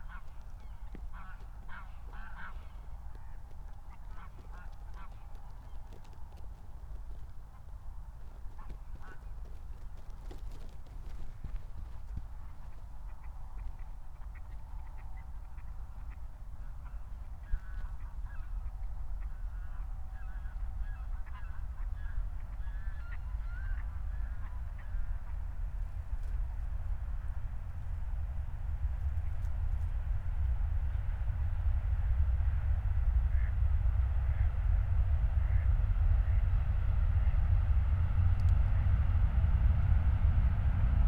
Moorlinse, Berlin Buch - near the pond, ambience
00:19 Moorlinse, Berlin Buch